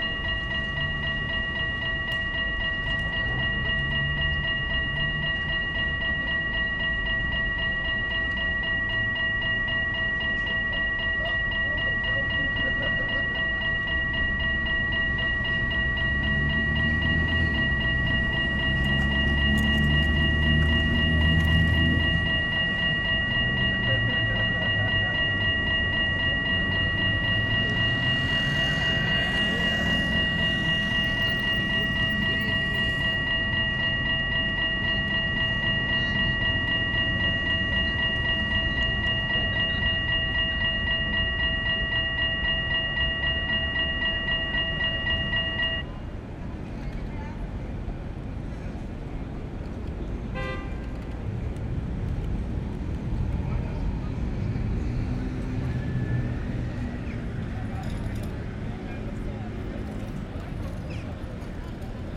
Den Haag, Nederlands - Red light signal
Bezuidenhoutseweg. A red light signal closes the crossroads when tramways pass. As there’s a lot of tramways, it’s closing a lot but shortly.